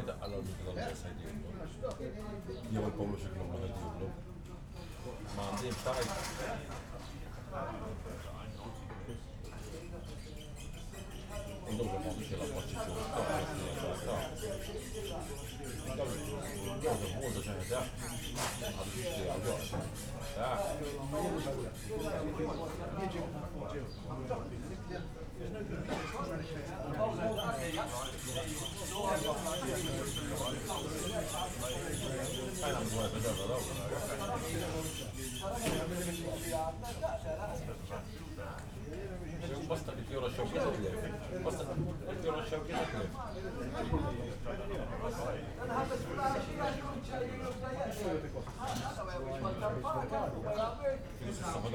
{"title": "Pjazza Indipendenza, Victoria, Malta - street cafe ambience", "date": "2017-04-04 16:35:00", "latitude": "36.04", "longitude": "14.24", "altitude": "109", "timezone": "Europe/Malta"}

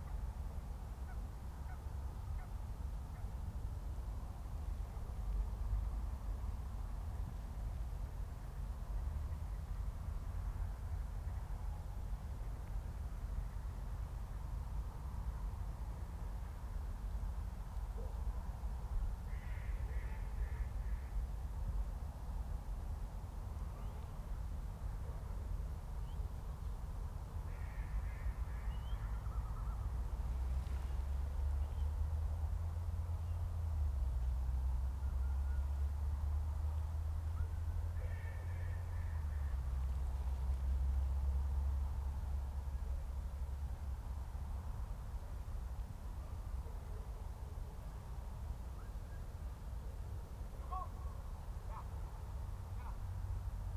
{"title": "Orgerus, France - Orgerus open air", "date": "2021-12-25 19:07:00", "latitude": "48.83", "longitude": "1.69", "altitude": "131", "timezone": "Europe/Paris"}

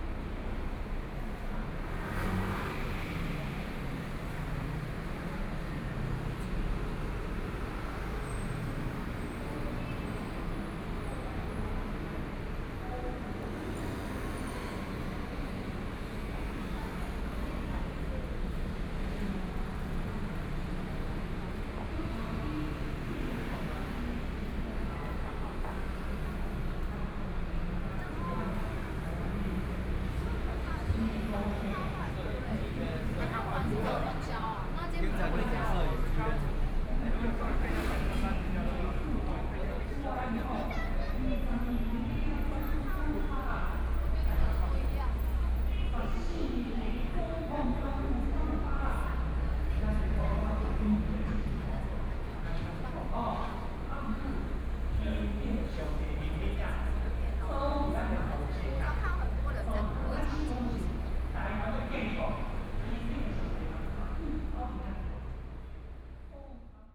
{"title": "Nanjing W. Rd., Datong Dist. - Walking on the road", "date": "2014-02-28 14:05:00", "description": "Traffic Sound, Sunny weather, Pedestrian, Various shops voices\nPlease turn up the volume a little\nBinaural recordings, Sony PCM D100 + Soundman OKM II", "latitude": "25.05", "longitude": "121.51", "timezone": "Asia/Taipei"}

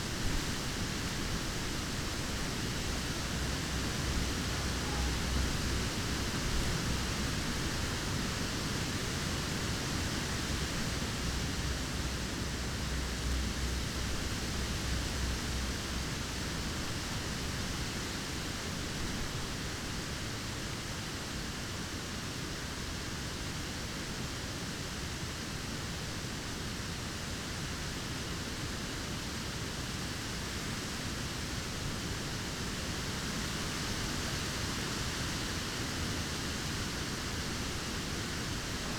{"title": "storkow: seepromenade - the city, the country & me: lake promenade, rustling wind", "date": "2011-02-26 18:17:00", "description": "wind rustling through dry leaves which still cling to a tree\nthe city, the country & me: february 26, 2011", "latitude": "52.25", "longitude": "13.95", "altitude": "42", "timezone": "Europe/Berlin"}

